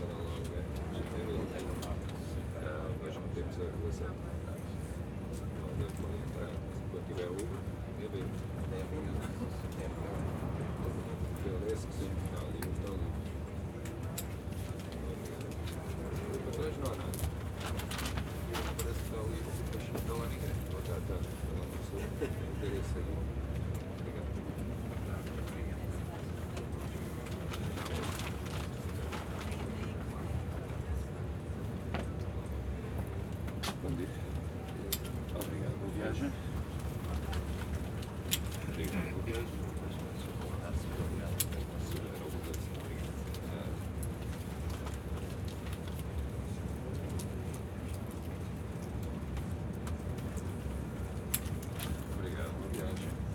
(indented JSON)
{
  "title": "Almeirim, on the train to Porto - ticket inspection",
  "date": "2013-09-29 12:18:00",
  "description": "ticket inspector going along the carriage, clipping tickets, talking to some of the passengers, announcing incoming station. train stops for a brief moment at the station.",
  "latitude": "39.23",
  "longitude": "-8.68",
  "altitude": "12",
  "timezone": "Europe/Lisbon"
}